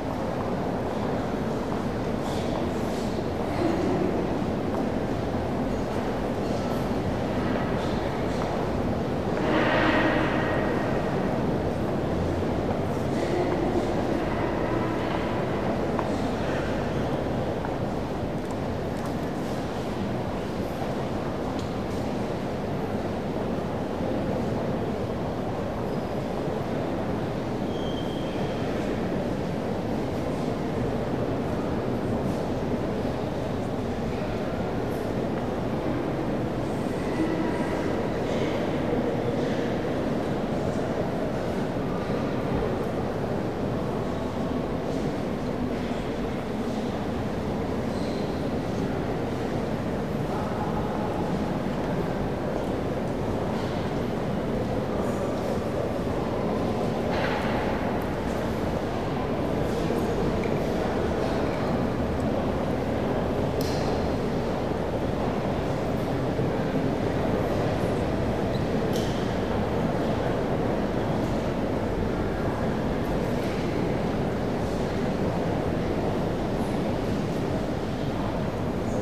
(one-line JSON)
{"title": "paris, notre dame, inside church", "date": "2009-12-12 12:23:00", "description": "Interior Atmosphere in the Nave of Notre Dame in the early afternoon - the church reverbance echoing the steps and talks of the tourist visitor inavsion\ninternational cityscapes - social ambiences and topographic field recordings", "latitude": "48.85", "longitude": "2.35", "altitude": "46", "timezone": "Europe/Berlin"}